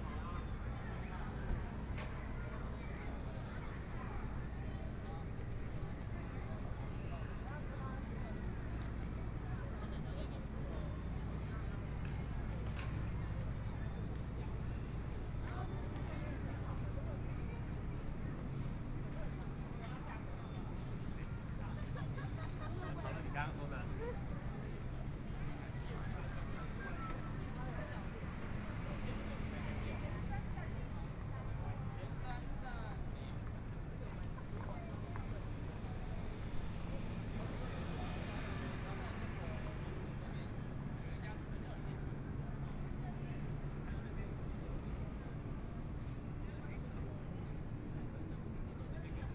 Yumen St., Taipei City - Holiday
Holiday, Walking towards the north direction, A lot of people in the street, Sunny mild weather, Aircraft flying through
Binaural recordings, ( Proposal to turn up the volume )
Zoom H4n+ Soundman OKM II